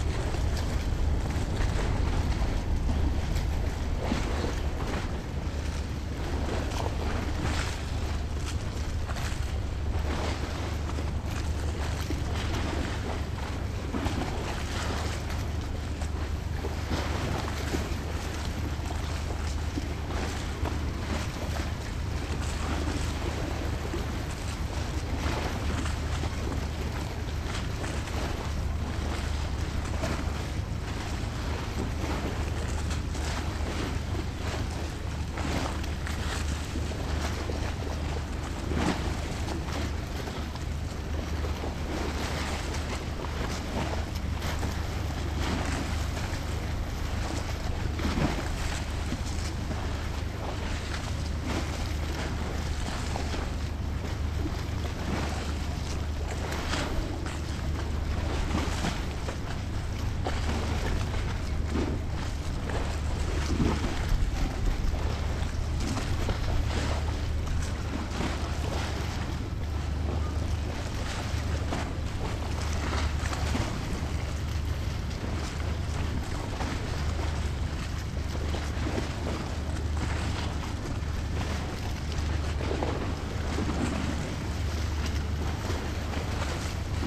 {"title": "Karakoy, winter sea", "date": "2011-02-19 10:40:00", "description": "sounds of seagulls and waves pounding the Karakoy Pier", "latitude": "41.02", "longitude": "28.98", "altitude": "5", "timezone": "Europe/Istanbul"}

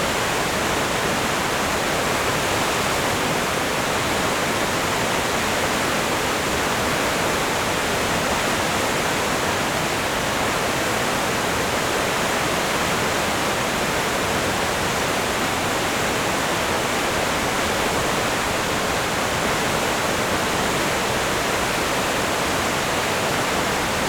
{"title": "Gesäuse Str., Admont, Österreich - Tor zum Gesäuse", "date": "2020-06-14 13:20:00", "description": "At the entrance to the valley called \"Gesäuse\" (which denotes a constant, roaring noise) the water of the of the river Enns falls down a steep slope emmitting a roaring noise which ist the origin of the place's name", "latitude": "47.58", "longitude": "14.56", "altitude": "618", "timezone": "Europe/Vienna"}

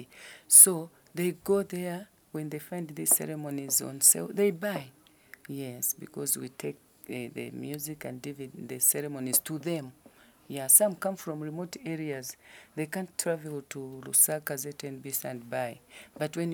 {"title": "Mass Media Centre, ZNBC, Lusaka, Zambia - Kumbuka, crossing the Zambezi...", "date": "2012-07-19 15:39:00", "description": "Mrs. Namunkolo continues describing in detail the “Kumbuka” ceremony of the Lozi people a ritual crossing of the Zambezi river twice a year by the King and the royal household…\nThe entire playlist of recordings from ZNBC audio archives can be found at:", "latitude": "-15.41", "longitude": "28.32", "altitude": "1267", "timezone": "Africa/Lusaka"}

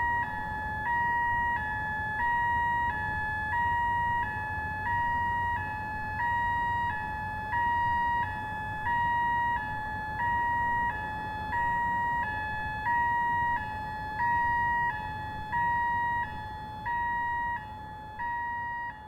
{"title": "North Manchester - Alarm Bleeping", "date": "2011-02-10 23:45:00", "description": "An alarm constantly bleeping, late at night.", "latitude": "53.54", "longitude": "-2.28", "altitude": "103", "timezone": "Europe/London"}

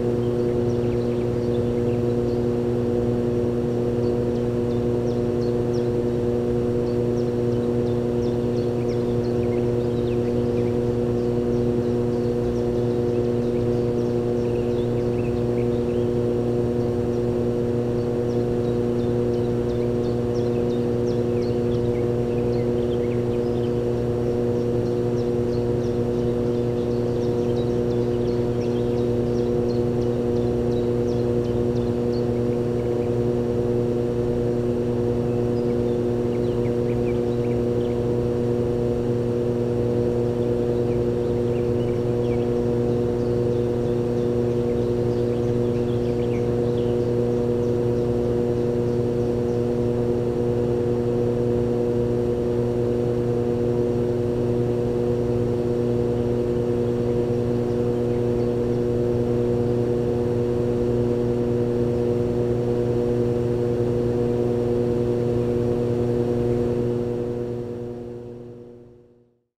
{"title": "ERM fieldwork -mine air intake facility", "date": "2010-07-03 14:40:00", "description": "ventilation air intake facility from an oil shale mine 70+ meters below", "latitude": "59.21", "longitude": "27.43", "altitude": "74", "timezone": "Europe/Tallinn"}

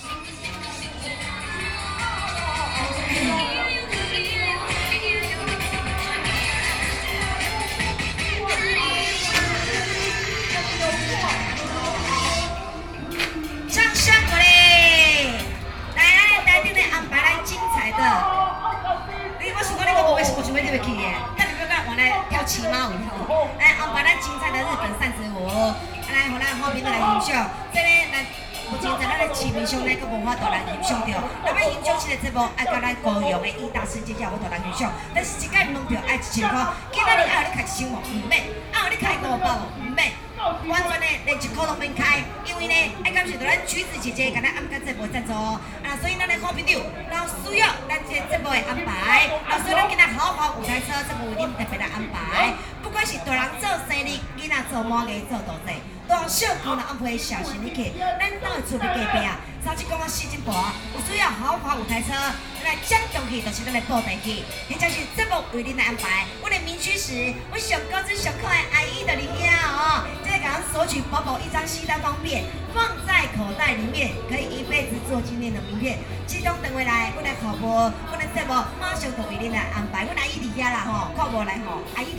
{"title": "Beitou, Taipei - Community party", "date": "2013-09-02 19:47:00", "description": "Taiwanese opera and Taiwan Folk temple activities, Sony PCM D50 + Soundman OKM II", "latitude": "25.14", "longitude": "121.50", "altitude": "14", "timezone": "Asia/Taipei"}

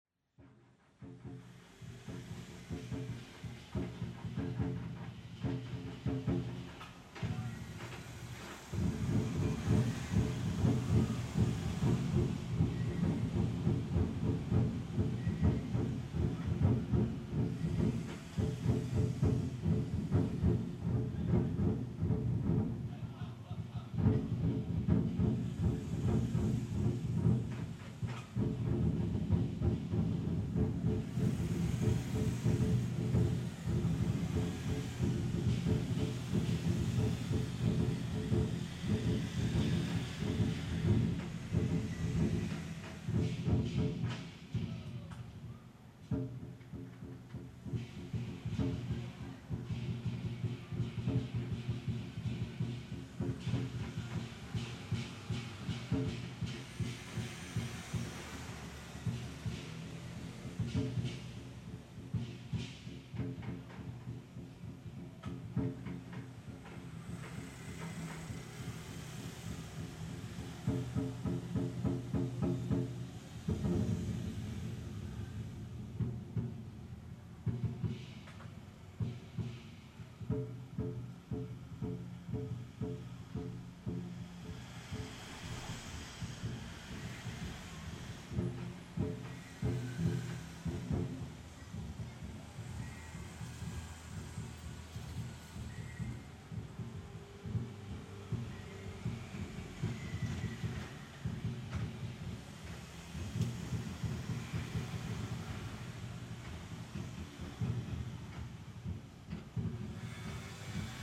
{"title": "251台灣新北市淡水區中興里 - drums group were practicing for a festival", "date": "2012-10-22 21:02:00", "description": "The drums group made the sound in campus.I was recording on balcony. There were cars passing by, and the teams of sport were hitting in playground.", "latitude": "25.17", "longitude": "121.45", "altitude": "49", "timezone": "Asia/Taipei"}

